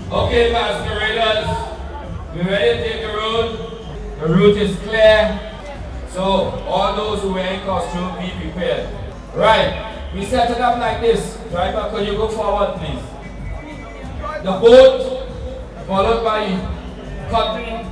… we are in a court yard at Notting Hill Gate… it’s Carnival Monday…! The place here is home of Yaa Asentewaa Arts Club… I’ve been here for hours… witnessing with growing excitement what I remember from Rio de Janeiro as “concentracao”… the “moment” just before the march… (that “moment” can take hours… and indeed the build-up of “concentracao” takes weeks and months…!)… so here just the last 14 odd minutes…
… it’s a special one though… listen, the order of characters and groups tells a history…”condensed”…
(…I’m linking these recordings to the map… 9 years past… in honour of Carnival and, of Claudia Jones, the “mother of Carnival” …!)
27 August 2006, 13:33